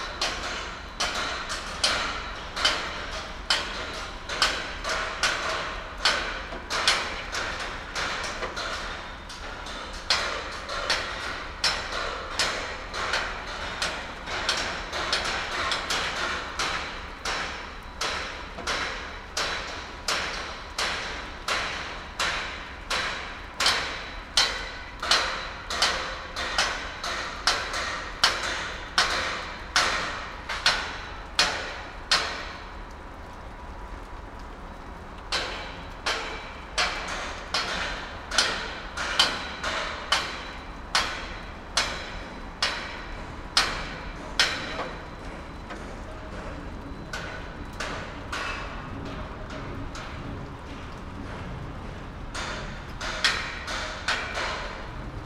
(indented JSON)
{
  "title": "Elgar Rd S, Reading, UK - Weston Homes Reading Riverside Construction Noise",
  "date": "2018-11-27 16:05:00",
  "description": "Weston Homes Reading Riverside Construction of 112 flats has been going on for a year. We as local residents over six years managed to get planning proposals overturned, but at the eleventh hour it went to central government and was approved. The lady inspector of development said that \"it would have no effect on the local environment\" Sony M10 with custom boundary array.",
  "latitude": "51.45",
  "longitude": "-0.97",
  "altitude": "40",
  "timezone": "Europe/London"
}